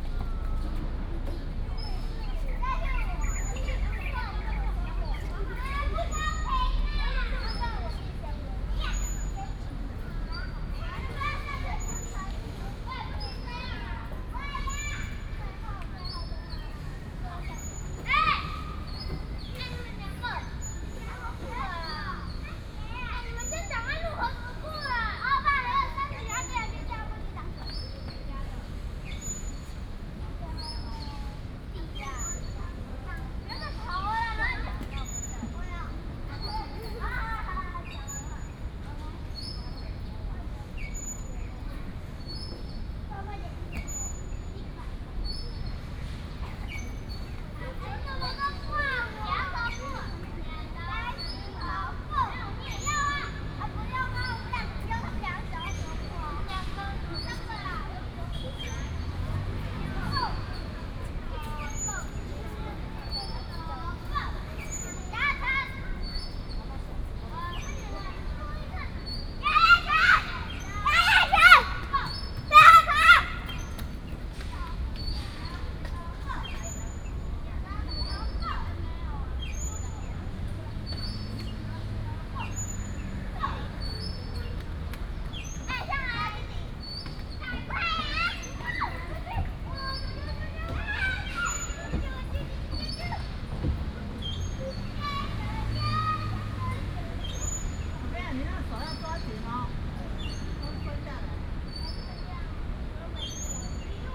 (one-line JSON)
{"title": "德安公園, Taipei City - in the Park", "date": "2015-06-25 16:42:00", "description": "Children's play area, Birds sound, traffic sound, Swing, .", "latitude": "25.03", "longitude": "121.55", "altitude": "24", "timezone": "Asia/Taipei"}